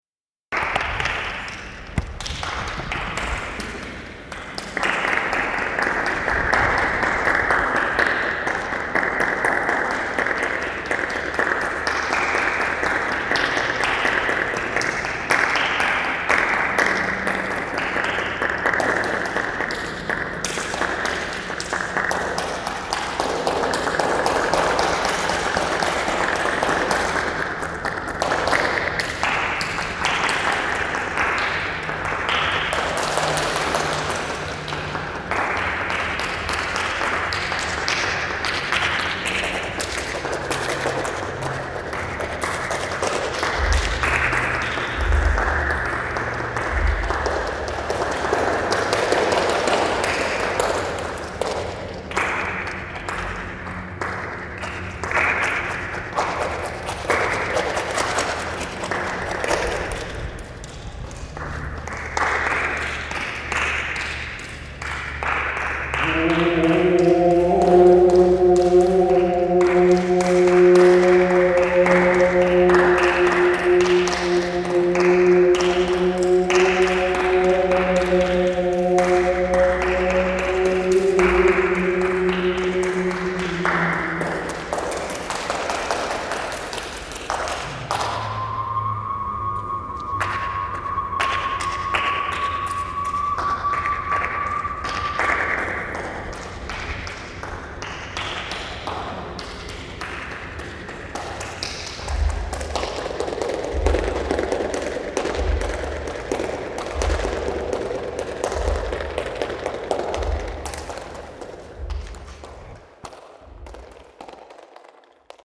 Neuss, Deutschland - museums island hombroich, tilapia sculpture
Inside the sculpture building named Tilapia by Katsuhito Nishikawa at museums island Hombroich. The sounds of claps and voices resonating in the concav ceiling reflecting concrete and metal structure.
soundmap d - social ambiences, topographic field recordings and art spaces